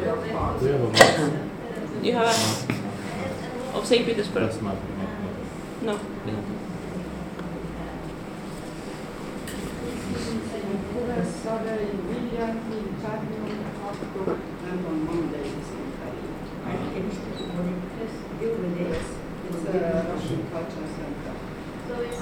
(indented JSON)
{
  "title": "Tourism info, Tallinn",
  "date": "2011-04-21 15:39:00",
  "description": "tourist info, how to go to St.Petersburg from Tallinn",
  "latitude": "59.44",
  "longitude": "24.74",
  "altitude": "31",
  "timezone": "Europe/Tallinn"
}